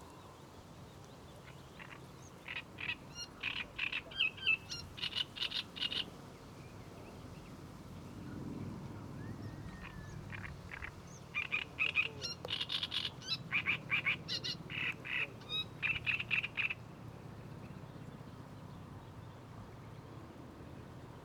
Odervorland Groß Neuendorf-Lebus, Deutschland - river Oder, sedge warbler
at the river Oder, listening to a sedge warbler (Schilfrohrsänger in german, guessing)
(Sony PCM D50, internal mics)
Letschin, Germany, 31 May 2015, 17:35